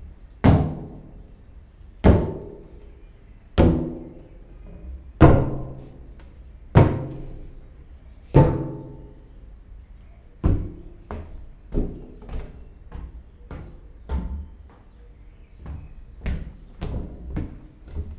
Rijeka, Riteh.uniri, Garage, DIN, Walking